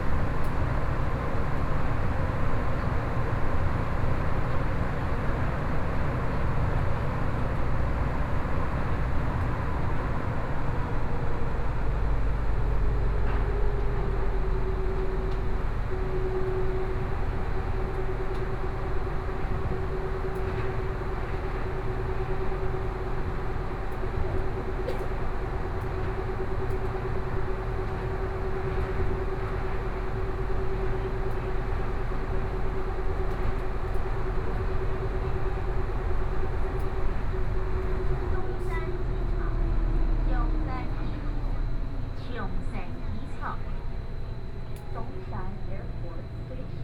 Neihu Line, Taipei City - Compartments in the MRT
from Dazhi station to Zhongshan Junior High School station
Binaural recordings, Please turn up the volume a little
Zoom H4n+ Soundman OKM II
16 February 2014, 19:27